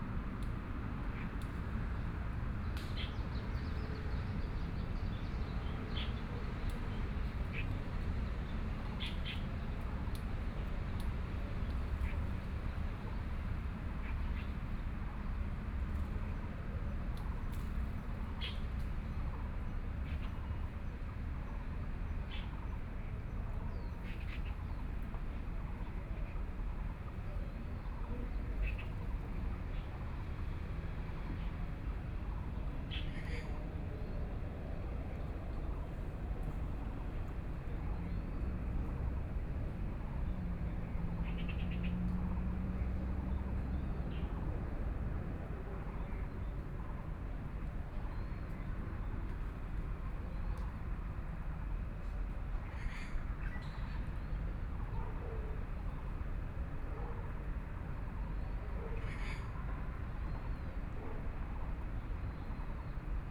Frogs sound, Insects sound, Birdsong, Traffic Sound, Aircraft flying through